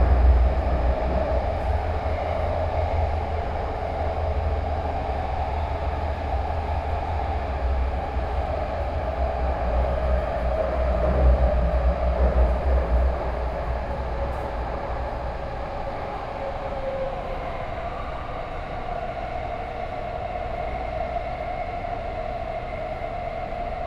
Sanchong District, New Taipei City - Orange Line (Taipei Metro)
from Daqiaotou station to Sanchong station, Sony PCM D50 + Soundman OKM II
New Taipei City, Taiwan, August 2013